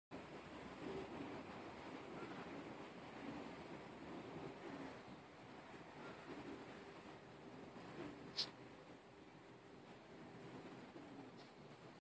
日本, 北海道斜里郡斜里町豊倉 - in the cloudy sky
Recording rain but I think its going to be looking up at the sky to fall at any moment. Please note that there are only about 10 seconds.